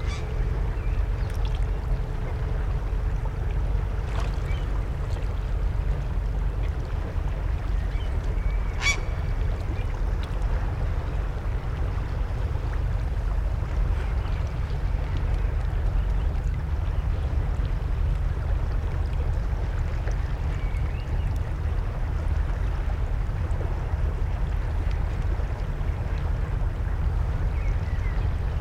gulls and boats at Paljasaare, Tallinn
sunset by the seaside at Paljasaare Tallinn Estonia
28 June, ~23:00